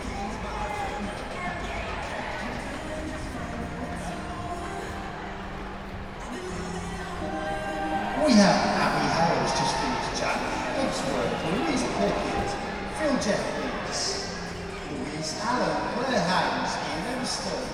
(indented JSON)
{
  "title": "Stadium Mk, Stadium Way West, Bletchley, Milton Keynes, UK - mk marathon ...",
  "date": "2022-05-02 12:30:00",
  "description": "mk marathon ... close to finish in the stadium mk ... dpa 4060s clipped to bag to zoom h5 ... plenty of background noise ... levels all over the place ... two family members took part in the super hero fun run ... one member took part in the marathon ...",
  "latitude": "52.01",
  "longitude": "-0.73",
  "altitude": "76",
  "timezone": "Europe/London"
}